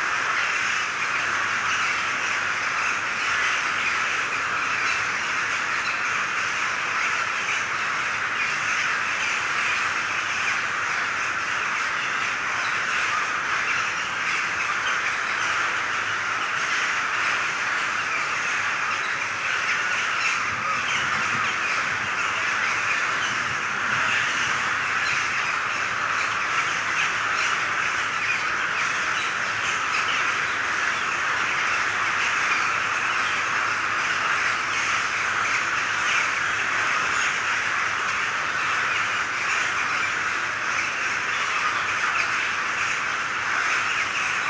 Park Sismigiu, Bucharest - Crows in the park at the end of the afternoon
Hundreds of crows screaming in the park Sismigiu at the end of the afternoon.
Some background noise from the city, sirens sometimes and some people walking around sometimes in the park.